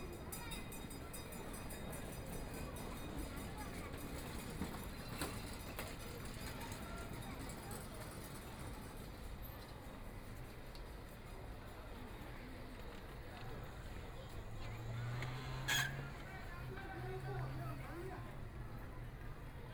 {"title": "Guangqi Road, Shanghai - Evening bazaars and markets", "date": "2013-11-29 17:12:00", "description": "Walking through the Street, Traffic Sound, Walking through the market, Evening bazaars and markets\nThe pedestrian, Binaural recording, Zoom H6+ Soundman OKM II", "latitude": "31.23", "longitude": "121.49", "altitude": "12", "timezone": "Asia/Shanghai"}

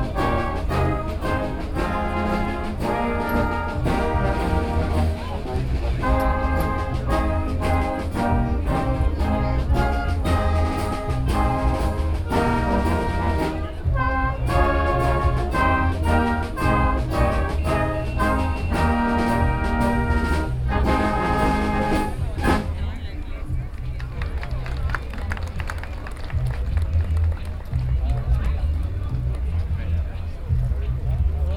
{"title": "Oslo, Radhusbrygge, Fanfare", "date": "2011-06-04 12:42:00", "description": "Norway, Oslo, port, fanfare, binaural", "latitude": "59.91", "longitude": "10.73", "timezone": "Europe/Oslo"}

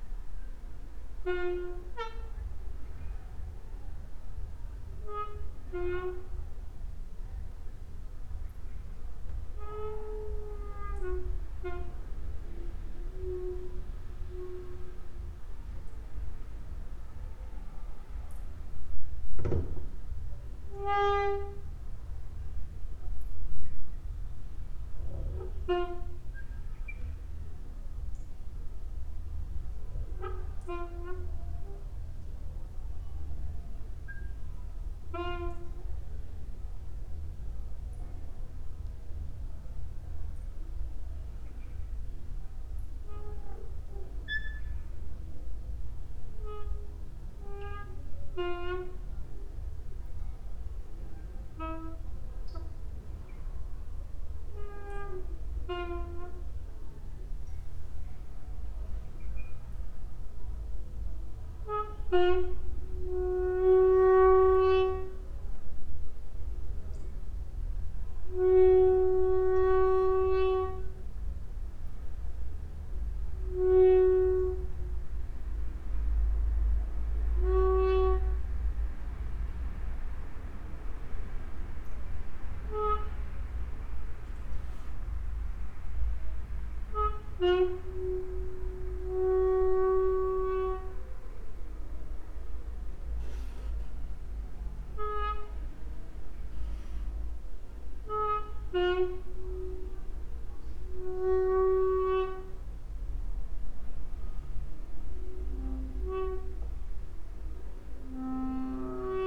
{"title": "Mladinska, Maribor, Slovenia - late night creaky lullaby for cricket/28", "date": "2013-10-22 23:27:00", "description": "... with drops into porcelain bowl", "latitude": "46.56", "longitude": "15.65", "altitude": "285", "timezone": "Europe/Ljubljana"}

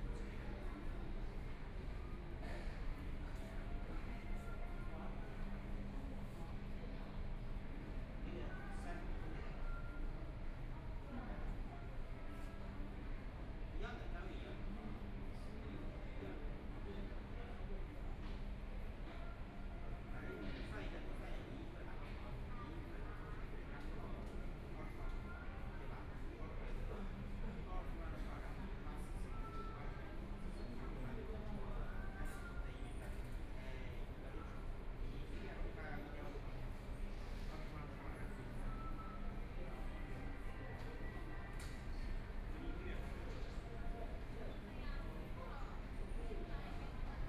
{"title": "Yishan Road Station, Xuhui District - on the platform", "date": "2013-11-23 15:13:00", "description": "Waiting on the platform, Messages broadcast station, Train arrived, Binaural recording, Zoom H6+ Soundman OKM II", "latitude": "31.19", "longitude": "121.42", "altitude": "9", "timezone": "Asia/Shanghai"}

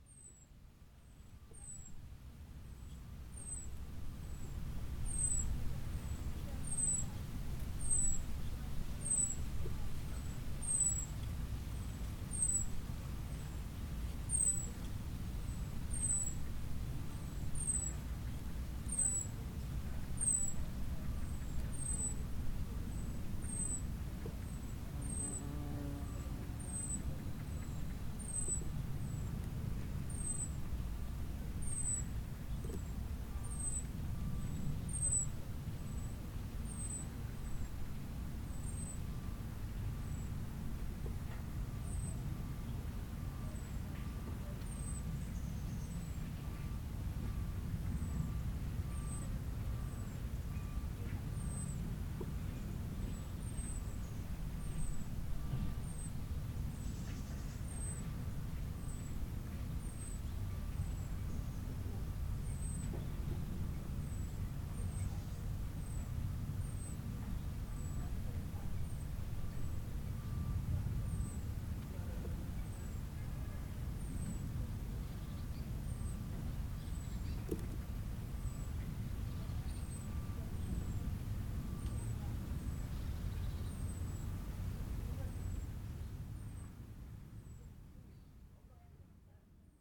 {"title": "Plage de Memard, Aix-les-Bains, France - Discrétion.", "date": "2022-09-13 10:45:00", "description": "Près de la roselière, un peu de vent dans les feuillages, un oiseau siffle avec insistance . pas de sons forts .", "latitude": "45.71", "longitude": "5.89", "altitude": "233", "timezone": "Europe/Paris"}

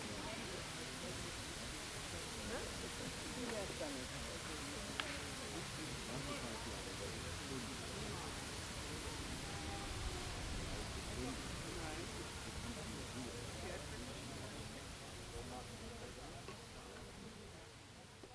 {"title": "Rudolph-Wilde-Park, Berlin, Deutschland - Goldener Hirsch", "date": "2014-08-27 18:00:00", "description": "Sonne, Leute beim Bowlen, Familien & der Brunnen im Hintergrund.", "latitude": "52.48", "longitude": "13.34", "altitude": "41", "timezone": "Europe/Berlin"}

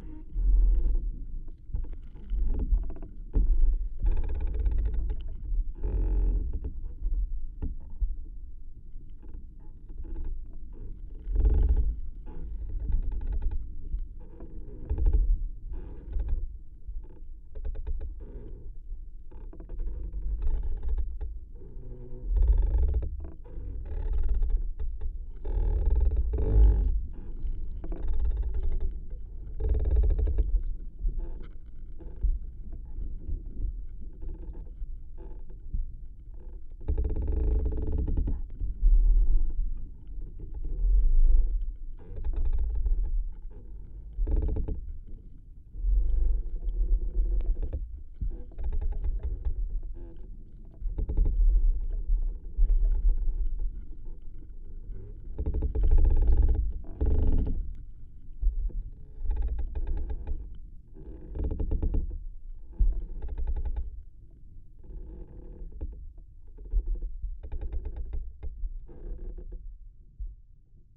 {"title": "Vyžuonų sen., Lithuania, fallen tree", "date": "2017-04-12 14:40:00", "description": "contact microphone recording of a dead tree swaying in the wind", "latitude": "55.55", "longitude": "25.57", "altitude": "120", "timezone": "Europe/Vilnius"}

